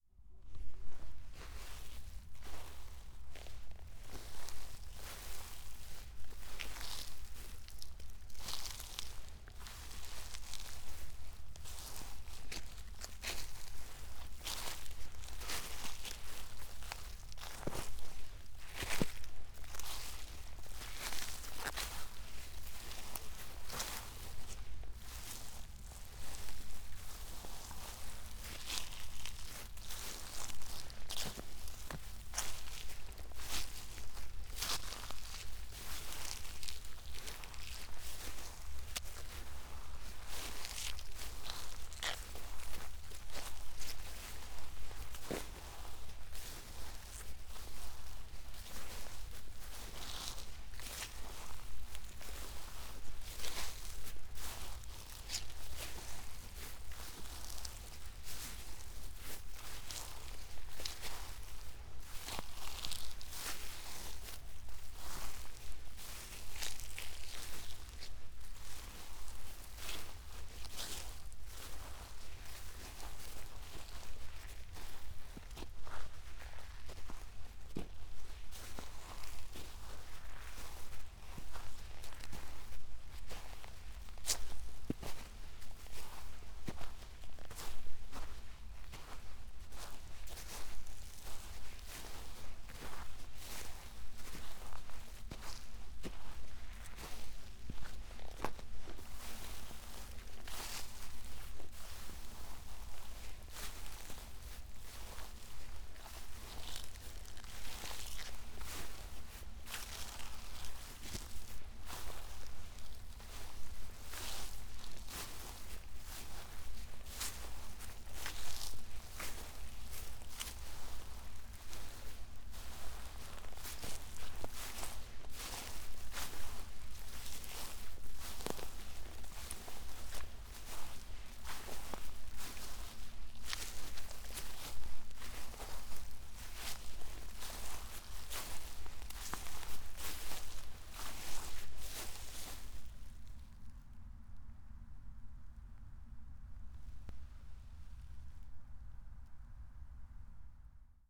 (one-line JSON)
{"title": "path of seasons, Piramida, Maribor - ice-crystal", "date": "2013-12-18 20:31:00", "description": "white fog, full moon, meadow covered with frozen carpet", "latitude": "46.57", "longitude": "15.65", "altitude": "385", "timezone": "Europe/Ljubljana"}